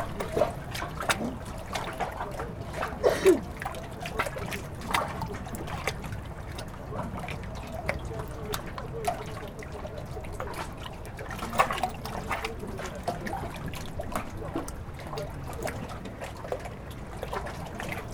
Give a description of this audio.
In a strong wind, there's waves on the lake. We are in the yacht club, near small boats called dinghies. Two children wait to board.